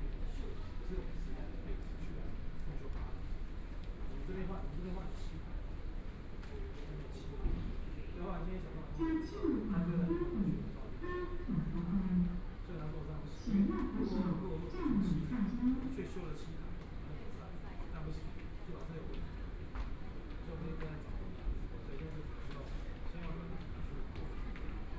Mackay Memorial Hospital, Taipei City - in the hospital
in the hospital, Binaural recordings, Zoom H4n+ Soundman OKM II
Taipei City, Zhongshan District, 馬偕醫院, 20 January, ~17:00